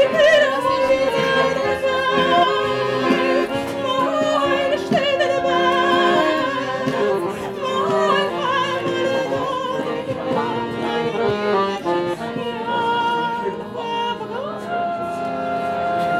Wrangelkiez, Berlin, Deutschland - kvartira 02
Berlin, Germany